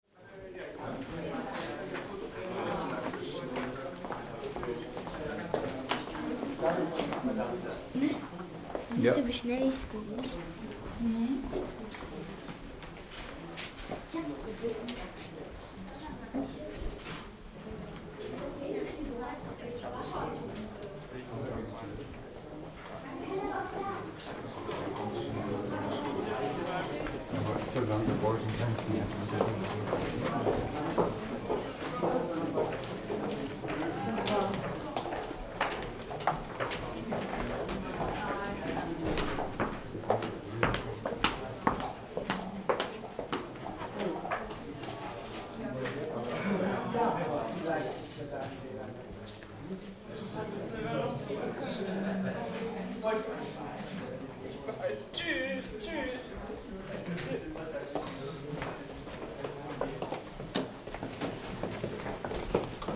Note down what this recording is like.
bundestagswahl 2009, wahllokal in der grundschule, bundestag elections 2009, polling station at the elementary school